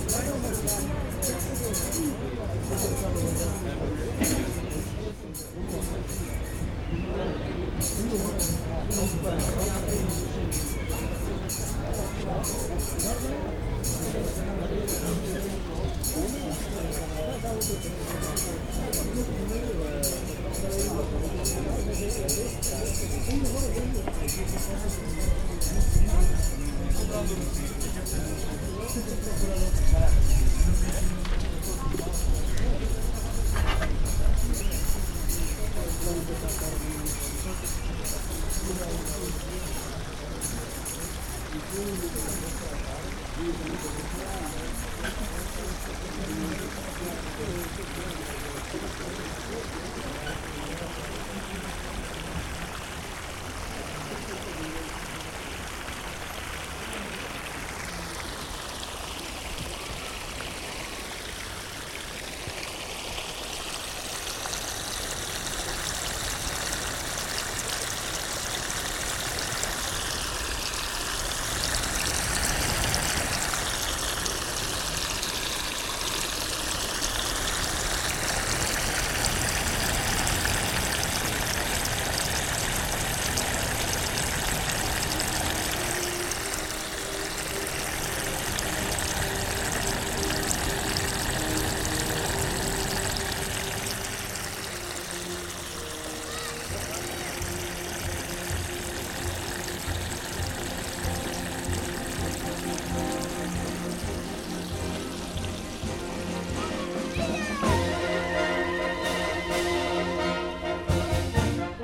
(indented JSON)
{
  "title": "Jardim da Estrela",
  "description": "A recording made during a concert day in this park.",
  "latitude": "38.72",
  "longitude": "-9.16",
  "altitude": "85",
  "timezone": "Europe/London"
}